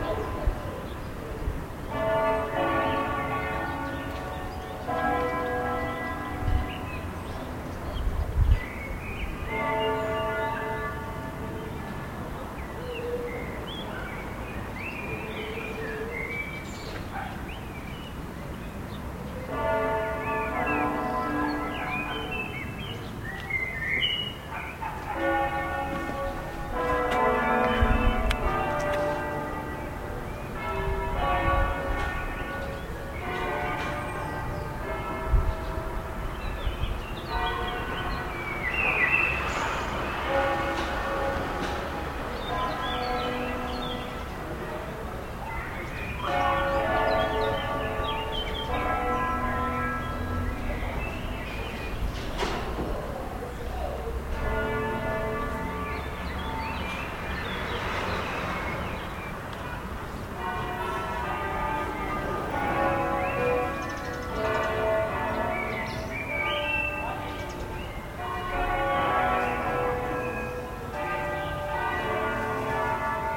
Campane e canti di uccelli nel centro di Parabiago
2 June 2011, 12:30